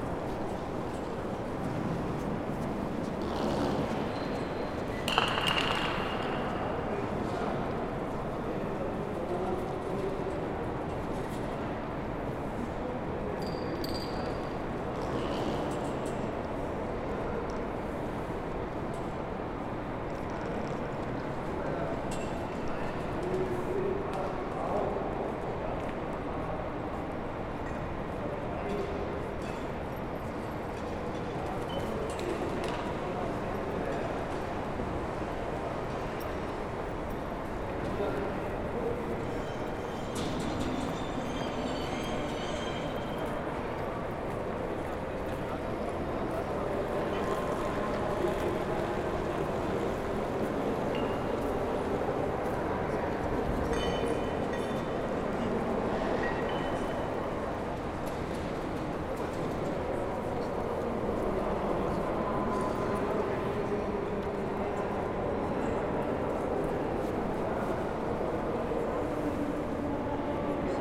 {"title": "Frankfurt Hauptbahnhof 1 - 27. März 2020", "date": "2020-03-27 12:53:00", "description": "Again friday, the week difference is nearly not audible. The hall is still emptier as usual, so some sounds are clearer as they would be, like a bottle on the floor.", "latitude": "50.11", "longitude": "8.66", "altitude": "110", "timezone": "Europe/Berlin"}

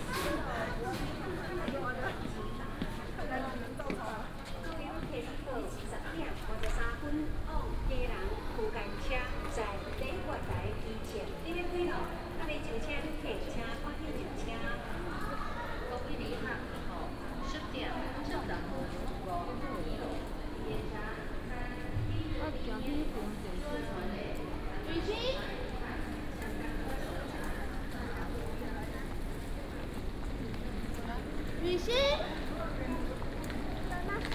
{"title": "Banqiao Station, Taiwan - Walking in the station hall", "date": "2015-09-30 10:53:00", "description": "Walking in the station hall", "latitude": "25.01", "longitude": "121.46", "timezone": "Asia/Taipei"}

